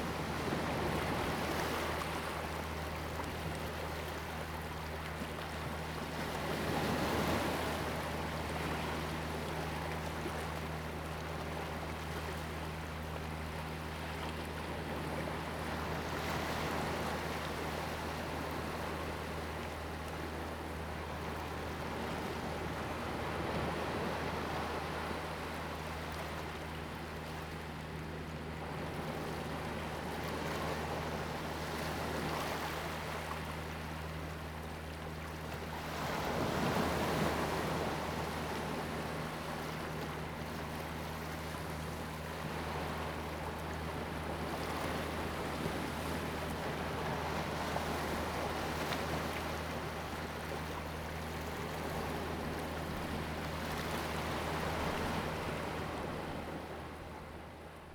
龍門村, Huxi Township - At the beach
At the beach, sound of the Waves, There are boats on the sea
Zoom H2n MS+XY